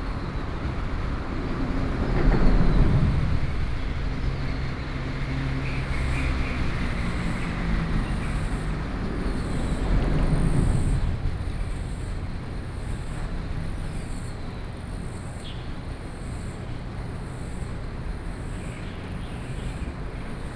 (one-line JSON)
{"title": "Shimen, New Taipei City - Under the highway viaduct", "date": "2012-07-11 06:33:00", "latitude": "25.30", "longitude": "121.58", "altitude": "7", "timezone": "Asia/Taipei"}